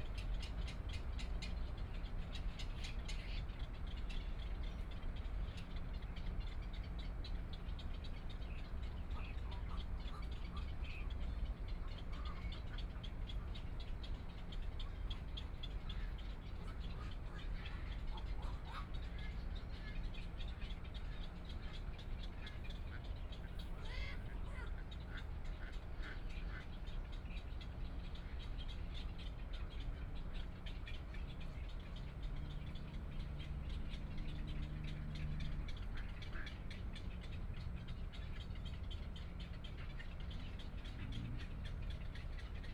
羅東林業文化園區, Luodong Township - Birdsong
Beside railroad tracks, Birdsong sound